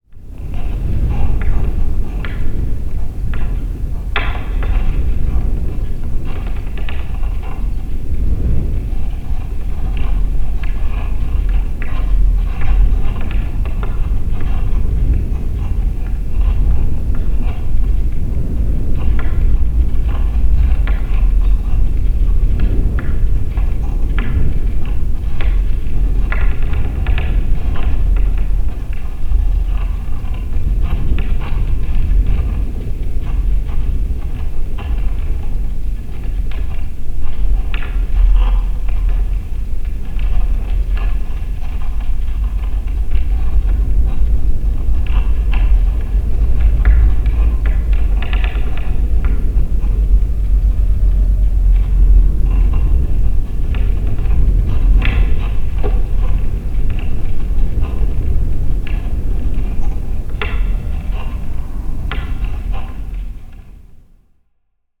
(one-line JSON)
{"title": "Metal Flagpole in a High Wind, Southwold, Suffolk, UK - Flagpole", "date": "2019-05-30 00:03:00", "description": "At midnight a strong wind is blowing from the left and I attach a single piezo contact mic to the tall metal pole.\nMixPre 3 with 2 x Sennheiser MKH 8020s in a windscreen wrapped with woolen scarves and 1 x inexpensive piezo contact element.", "latitude": "52.33", "longitude": "1.68", "altitude": "4", "timezone": "Europe/London"}